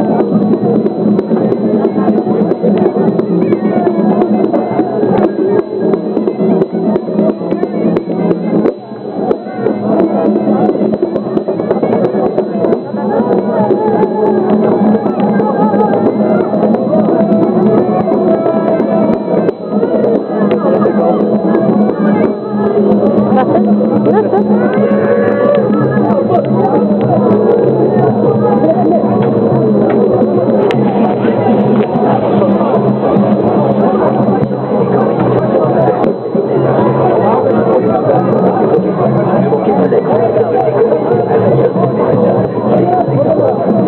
Marrakech Street Sound Jemaa el-Fna
Morocco